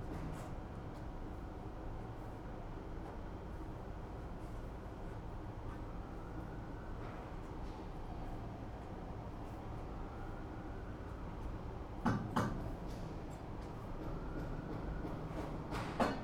porto, r. de passos manuel - maus habitos, roof
maus habitos, location of the futureplaces festival, roof terrace, preparations, ventilation